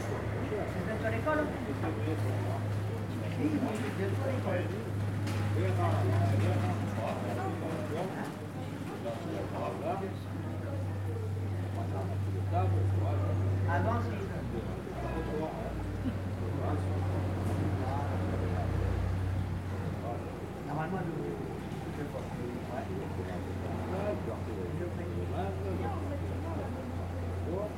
Sitting in the square in Villars Sur Var, you can hear people sitting and talking outside the cafe to the right, and in the distance in the centre people standing and talking outside the church. You can also faintly hear a fountain, and occasional cars winding around the roads.
Recorded on a Zoom H4n internal mics.
20 February 2016, ~13:00